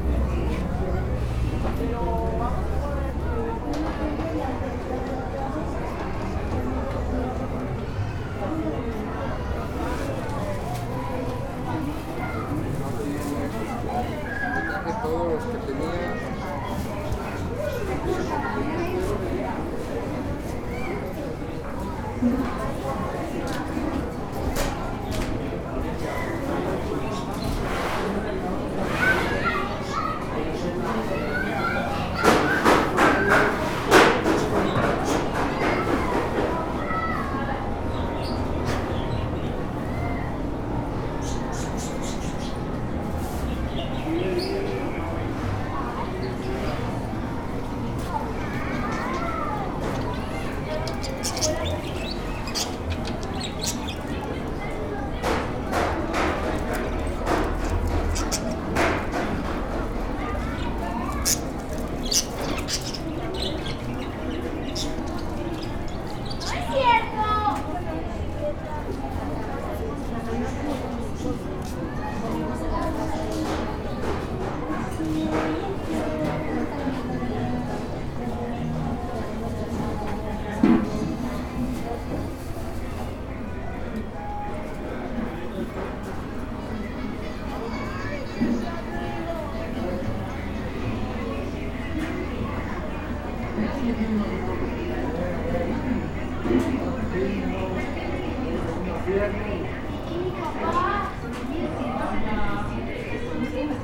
Jardín Allende, Obregon, León, Gto., Mexico - Mercado tianguis del Barrio o centro comercial Allende y jardín.
Walking around the market, tianguis and plaza Jardín Allende.
It was a Tuesday, day that the tianguis is working together to the market that works every day.
There are many businesses like a tortilla, butcher, fruit shops, and also clothes, stamen, dolls stands, and people. And much more.
I made this recording on February 18th, 2020, at 2:38 p.m.
I used a Tascam DR-05X with its built-in microphones and a Tascam WS-11 windshield.
Original Recording:
Type: Stereo
Paseando por el mercado, tianguis y plaza de Jardín Allende.
Fue un martes, día en que el tianguis está trabajando junto al mercado que está todos los días.
Hay muchos negocios como tortillería, carnicería, frutería, y también puestos de ropa, de muñecas, de estambre y mucha gente. Y mucho más.
Esta grabación la hice el 18 de febrero 2020 a las 14:38 horas.
Guanajuato, México, 18 February